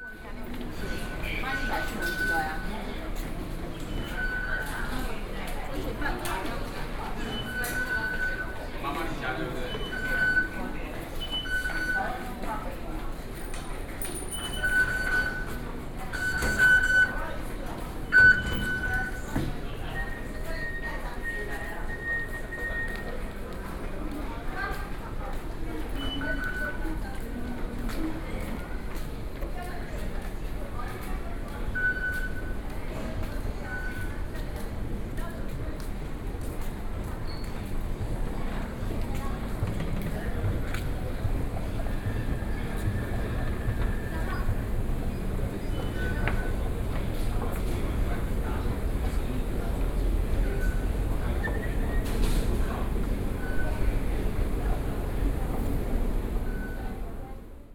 Longshan Temple Station, 萬華區 Taipei city - Enter the MRT station
Wanhua District, Taipei City, Taiwan, 3 November